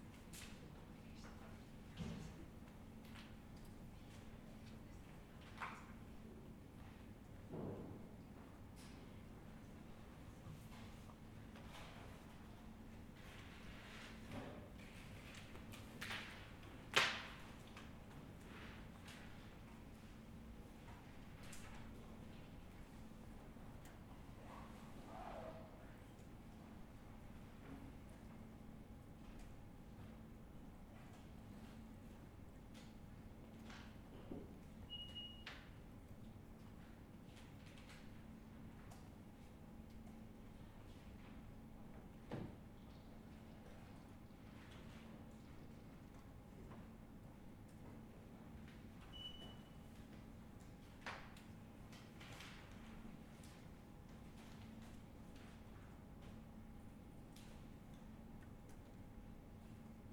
Cantoblanco Universidad, Madrid, España - Reading room
I chose a table in the middle of the room and sit down. I put the microphone at the
middle of the table. There are not many people. At the next desk someone takes out a
notebook from his backpack and puts it on the table. The dragging
of a chair far can be heard from where I am. Sound of steps in the stairs that heads to the second
floor. Someone sneezes. It is heard the typing on the laptops. More steps. Murmurs.
Moving things on the tables. The zip of a backpack while it's opened. Beeps of returning a
book.
Recorded with a Zoom H4n.